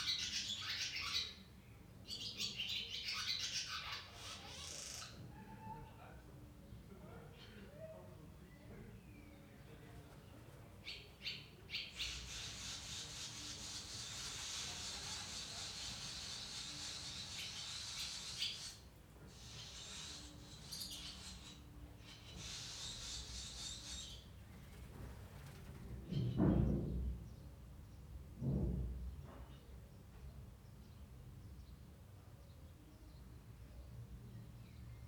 [Hi-MD-recorder Sony MZ-NH900, Beyerdynamic MCE 82]
Fahrenwalde, Germany, 8 June, 15:30